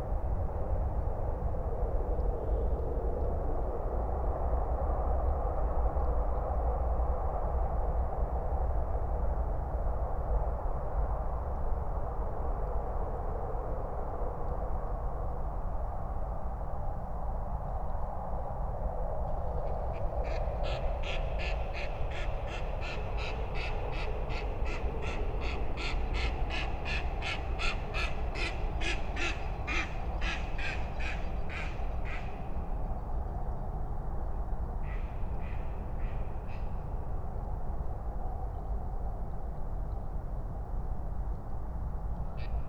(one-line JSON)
{"title": "Berlin, NSG Bucher Forst - Bogensee, forest pond ambience", "date": "2021-02-28 18:30:00", "description": "(remote microphone: AOM5024/ IQAudio/ RasPi Zero/ LTE modem)", "latitude": "52.64", "longitude": "13.47", "altitude": "54", "timezone": "Europe/Berlin"}